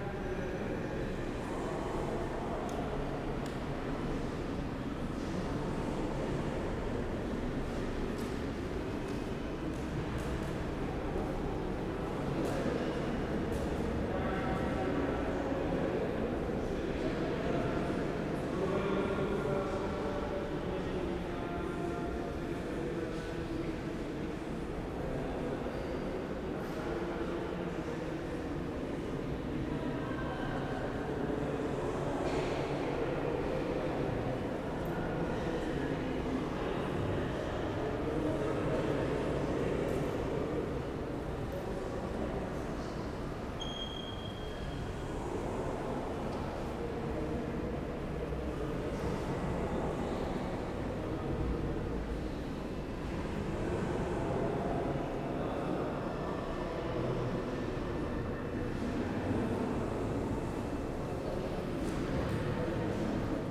sonic survey of 18 spaces in the Istanbul Technical University Architecture Faculty
March 2010